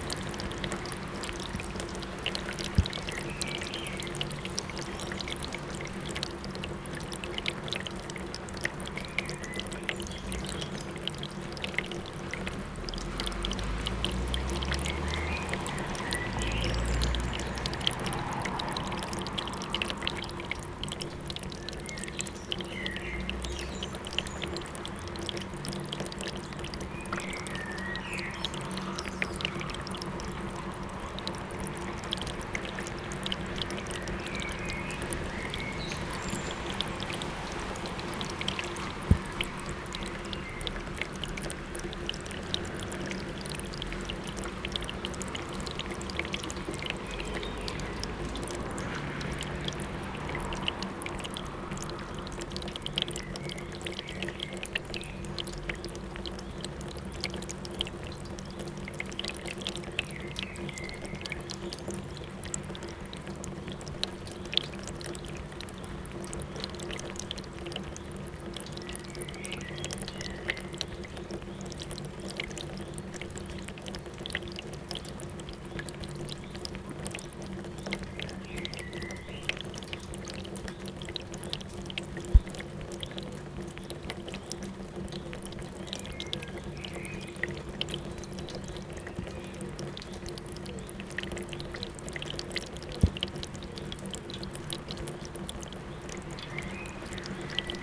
Wojska Polskiego / Mickiewicza - Storm is over, water lasts.
Worm, sunny day. Short, strong storm. House on the corner. Downpour residue dripping from the roof into the gutters.
Zoom h4n fighting his next battle with moisture.